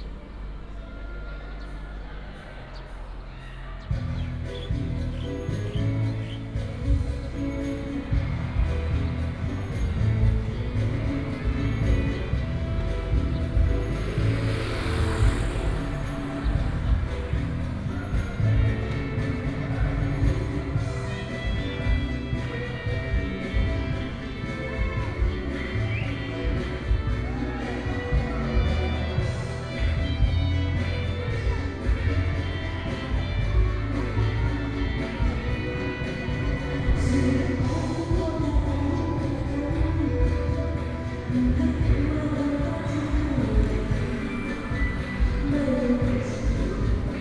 Daniao, Dawu Township, 大武鄉大鳥 - Karaoke
Aboriginal tribal entrance, Holidays many residents return to the tribe, birds sound, Karaoke, traffic sound
Dawu Township, 大鳥聯外道路